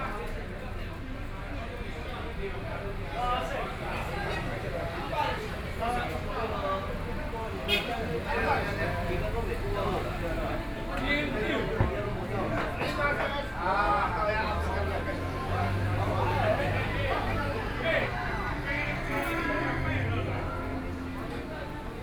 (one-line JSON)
{"title": "鼓山區惠安里, Kaohsiung City - soundwalk", "date": "2014-05-21 18:27:00", "description": "walking on the Road, Traffic Sound, Various shops voices\nSony PCM D50+ Soundman OKM II", "latitude": "22.62", "longitude": "120.27", "altitude": "7", "timezone": "Asia/Taipei"}